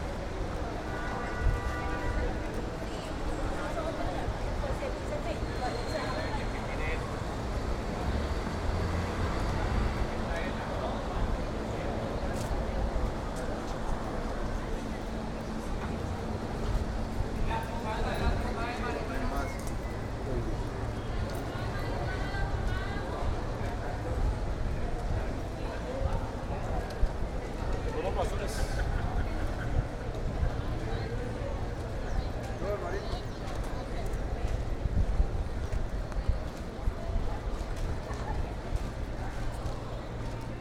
Ibagué, Ibagué, Tolima, Colombia - Ibagué deriva sonora01
Ejercicio de deriva sonora por el centro de Ibagué.
Punto de partida: Hotel Ambalá
Soundwalk excercise throughout Ibagué's dowtown.
Equipment:
Zoom h2n stereo mics Primo 172.
Technique: XY